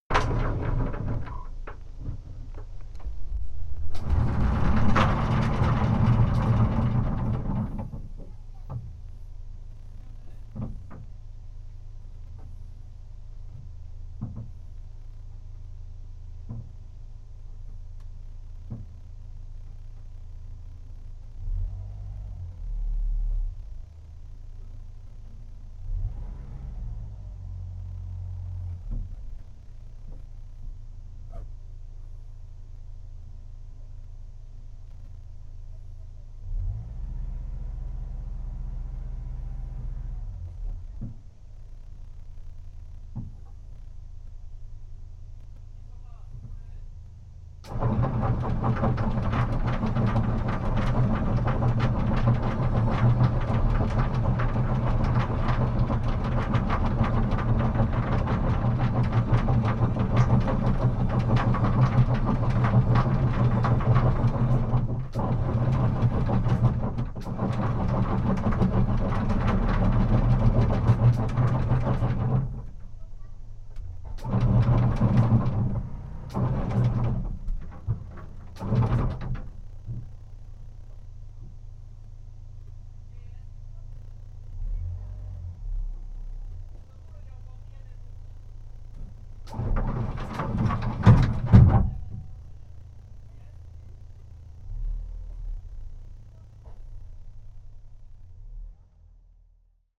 Underwater Kakan, Croatia - (795) Anchoring Mesa recording
Recording from Mesa during anchoring.
Recorded with UNI mics of Tascam DR100Mk3